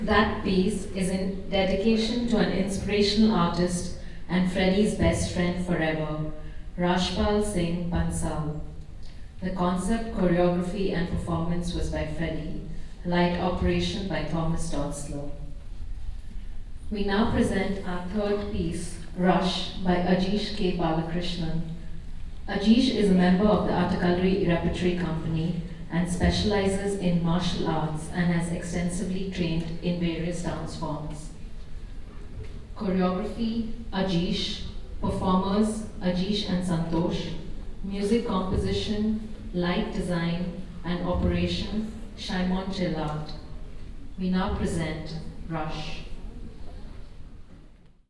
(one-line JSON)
{"title": "ataka, ranga shankar theatre - bangalor, karnataka, ranga shankar theatre", "date": "2011-02-15 15:12:00", "description": "a third recording at the same location - here: an anouncement\ninternational city scapes - social ambiences, art spaces and topographic field recordings", "latitude": "12.91", "longitude": "77.59", "altitude": "920", "timezone": "Asia/Kolkata"}